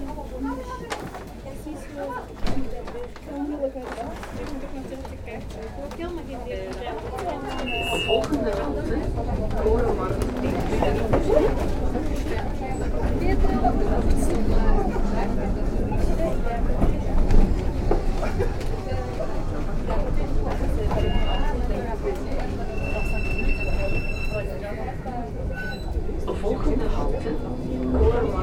Gent, België - Tramway ride into the old city
Tram ride in the heart of the old city of Ghent. The vehicle is crowded. Very difficult to record (I had to do it three times) because of a good amount of infrabass. However, the route is interesting considering that the vehicle has difficulty with tight curves. Journey from Gravensteen to Van Nassaustraat.
Gent, Belgium, 16 February, 18:45